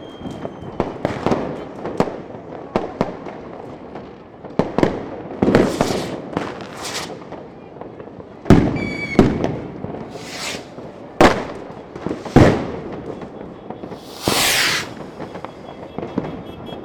Berlin: Vermessungspunkt Friedelstraße / Maybachufer - Klangvermessung Kreuzkölln ::: 01.01.2011 ::: 00:17
Berlin, Germany, 1 January, ~00:00